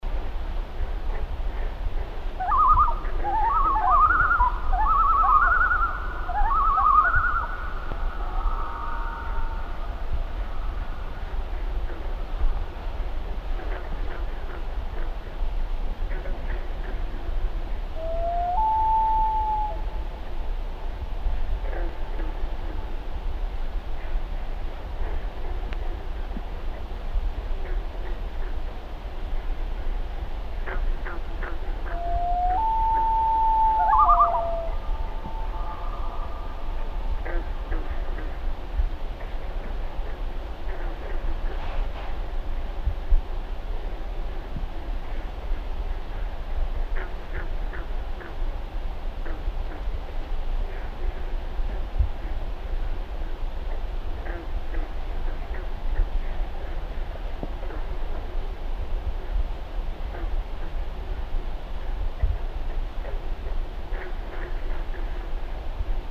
Lake Insula, MN, USA - loons, frogs, wind, Lake Insula, boundary waters Minnesota
Camping on an island in Lake Insula in the boundary waters of Minnesota, I awoke before dawn and recorded these sounds of wind, loons, and frogs.
9 July 2015, 04:15